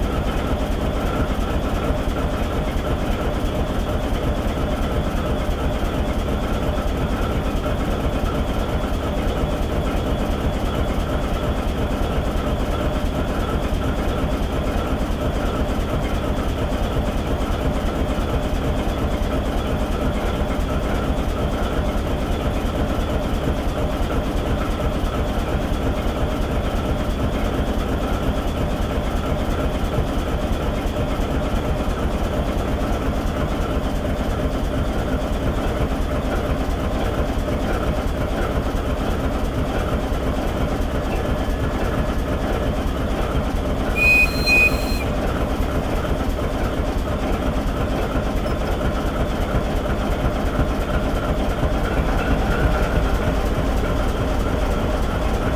Severodvinsk, Russia - locomotive
Engine sound of the locomotive.
recorded on zoom h4n + roland cs-10em (binaural recording)
Звук работающего двигателя локомотива.